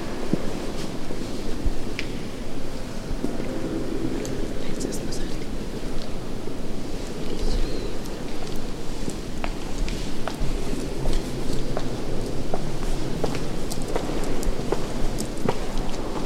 {
  "title": "Ambit of the Monastery of St. Jilji",
  "date": "2011-01-14 12:35:00",
  "description": "Wind in dry leaves in the bus in the middle of the ambit of the Monastery of Dominicans at Old Town.",
  "latitude": "50.09",
  "longitude": "14.42",
  "altitude": "202",
  "timezone": "Europe/Prague"
}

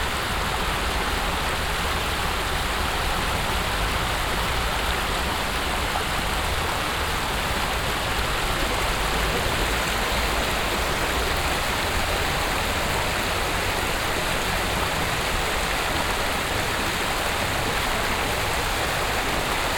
{
  "title": "essen, kettwiger street, fountain",
  "date": "2011-06-08 23:19:00",
  "description": "Am Essener Dom. Eine längere kaskadierende Wassrfontäne, deren Klang ein wenig die Musik eines naheliegenden Restaurants überdecken kann.\nA long, stairway like water fountain hiding a little the music from a nearby restaurant.\nProjekt - Stadtklang//: Hörorte - topographic field recordings and social ambiences",
  "latitude": "51.46",
  "longitude": "7.01",
  "altitude": "87",
  "timezone": "Europe/Berlin"
}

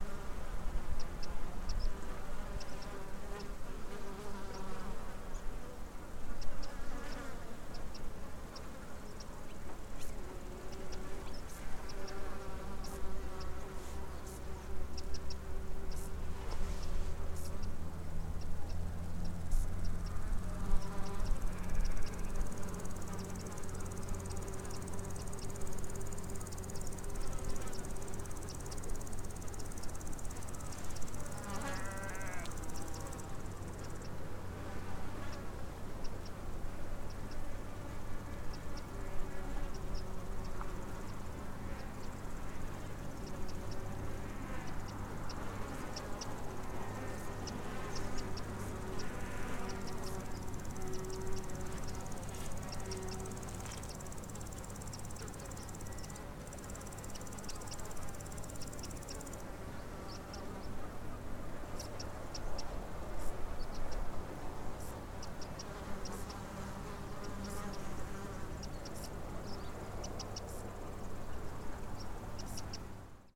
{
  "title": "Moyrisk, Co. Kerry, Ireland - Roadside insects and birds",
  "date": "2018-07-12 11:50:00",
  "description": "Recorded with 2 x Rode NT5 and Tascam DR-680. Roadside is teeming with insects. Can hear birds over head and sheep in nearby fields. Can make out a boat crosses the bay below and some seabirds in the distance from Puffin Island.",
  "latitude": "51.85",
  "longitude": "-10.38",
  "altitude": "139",
  "timezone": "Europe/Dublin"
}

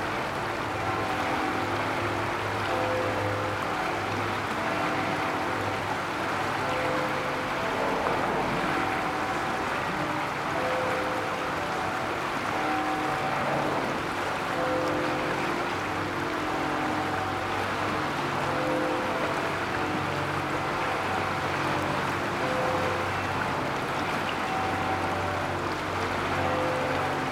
{
  "title": "Rue de Bavière, Malmedy, Belgique - Warche river and funeral bells",
  "date": "2022-01-07 09:50:00",
  "description": "River flow and funeral bells in the distance.\nTech Note : Sony PCM-D100 internal microphones, wide position.",
  "latitude": "50.43",
  "longitude": "6.03",
  "altitude": "338",
  "timezone": "Europe/Brussels"
}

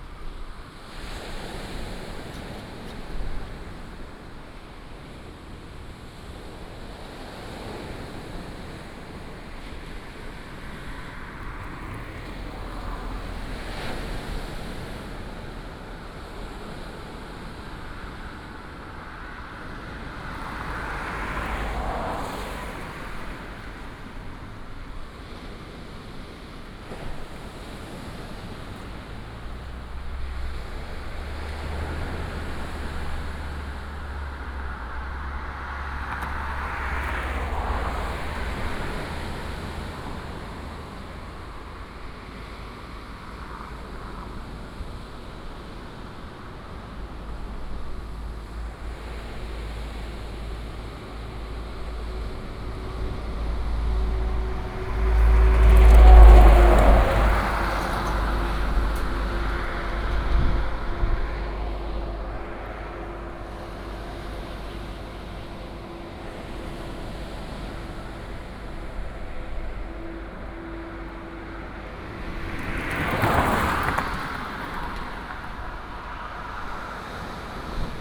{
  "title": "南迴公路, Duoliang, Taimali Township - Morning on the coast",
  "date": "2018-04-14 05:39:00",
  "description": "Beside the road, Traffic sound, early morning, Chicken roar, birds sound, Sound of the waves\nBinaural recordings, Sony PCM D100+ Soundman OKM II",
  "latitude": "22.51",
  "longitude": "120.96",
  "altitude": "24",
  "timezone": "Asia/Taipei"
}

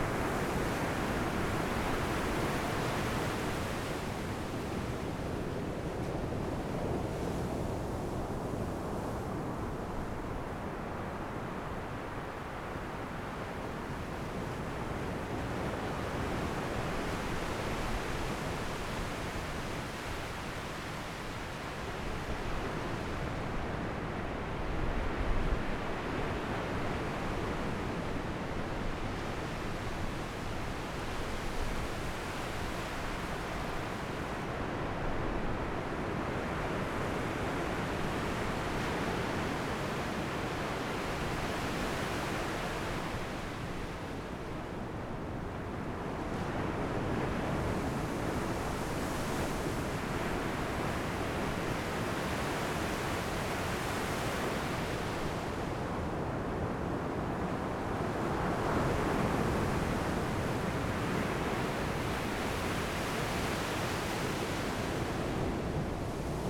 {
  "title": "Taitung City, Taiwan - sound of the waves at night",
  "date": "2014-01-16 18:36:00",
  "description": "Sitting on the beach, The sound of the waves at night, Zoom H6 M/S",
  "latitude": "22.75",
  "longitude": "121.16",
  "timezone": "Asia/Taipei"
}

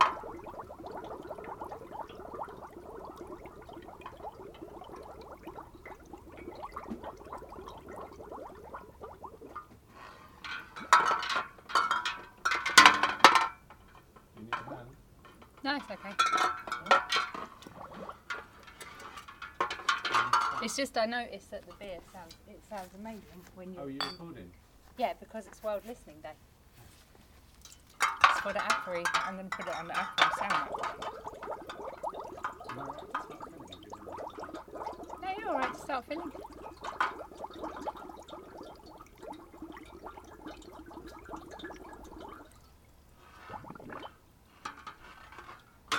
{
  "title": "Our back garden, Katesgrove, Reading, UK - Bottling the beer",
  "date": "2014-07-18 19:41:00",
  "description": "This is the sound of us bottling up a batch of beer. The first job involves sterilising all the bottles, so the bubbling sound is me filling up loads of glass bottles with sterilising solution. As soon as I heard the first bottle glugging, I thought \"this is a lovely sound! I want to record it for World Listening Day!\" So I went and got the recorder. You can hear some chit chat about that; then we bicker about Mark drinking extra beer out of some of the bottles to get the liquid levels right; we fiddle around with the fancy bottle-capping device. Traffic moves on the street, very slowly, you can hear the wonderful birds in our neighbourhood, mostly sparrows in this recording. All the timings are made by our work together as we sterilise the bottles, fill them with beer, cap the bottles then rinse them down. It's a batch of 30 bottles.",
  "latitude": "51.44",
  "longitude": "-0.97",
  "altitude": "55",
  "timezone": "Europe/London"
}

